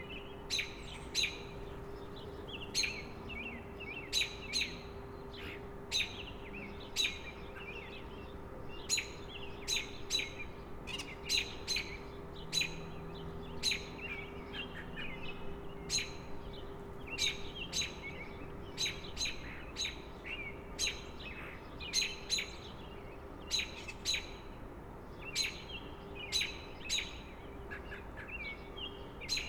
Pearl Ave, Peterborough, ON, Canada - 7 a.m. Mar. 25, 2020 field recording
The microphone is a Sennheiser mono shotgun mic, positioned in the window of a third floor attic of a house in Peterborough, Ontario, Canada. Peterborough is a small city located between Toronto and Ottawa with a long history of working class manufacturing jobs, and more recently the city has been strongly influenced by two post-secondary institutions. The neighbourhood where the microphone is positioned is just adjacent to downtown Peterborough and is known as The Avenues. It was initially built as a suburb to house the workers employed at the General Electric manufacturing facility. The facility is now a nuclear processing plant, and the neighbourhood has evolved to house a mix of tenants and homeowners – from students renting homes to the middle and working classes.
This microphone picks up lots of sounds of residential life – the sounds of heating exhaust from houses, cars and trucks coming and going, and people going about their day.
Ontario, Canada, 25 March 2020, 07:26